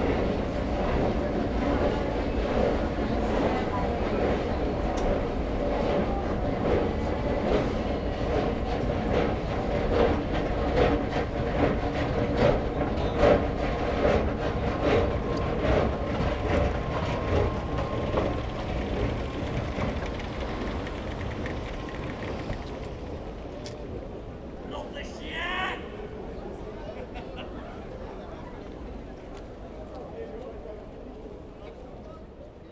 Antwerpen, Belgique - Groenplaats metro station
A long walk into the Groenplaats metro station (it means the green square, but today nothing is green here !). Starting with an accordion player. After, some metros passing in the tunnel, with strong rasping, and at the end, a girl singing something I think it's Alela Diane, but I'm not quite sure (to be completed if you recognize !).
Antwerpen, Belgium